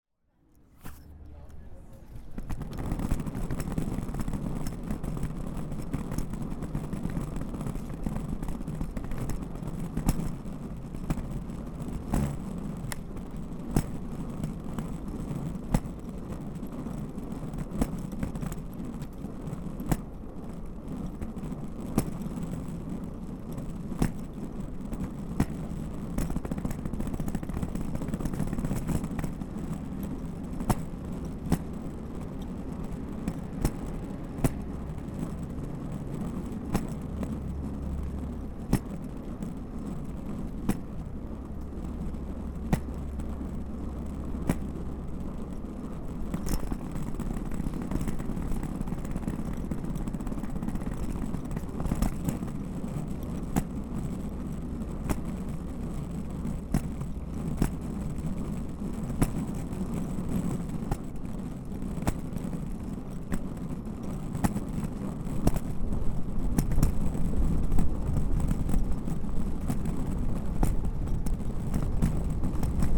Pearl St, Boulder, CO, USA - USA Luggage Bag Drag #12
Recorded as part of the 'Put The Needle On The Record' project by Laurence Colbert in 2019.